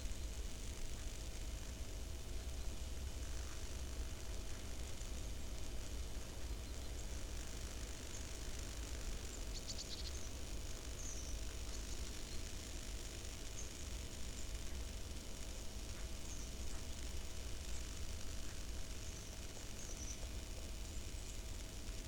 Lithuania, under high voltage line
high voltage lines, cracking and crackling electricity in the wires